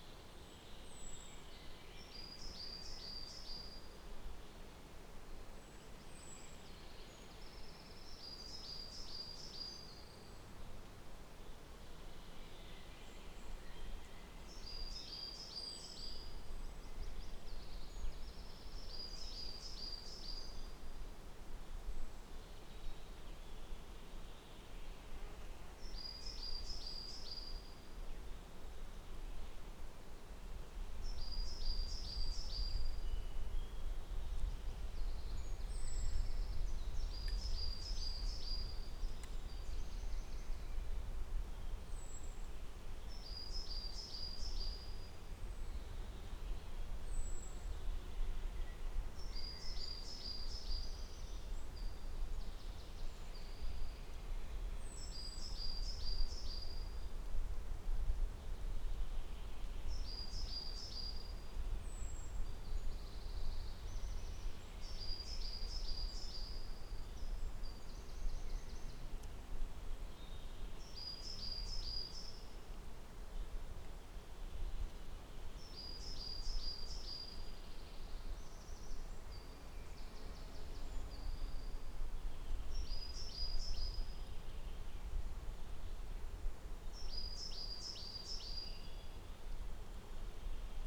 Birds and wind in beech forest.
MixPre3 II with Lom Uši Pro.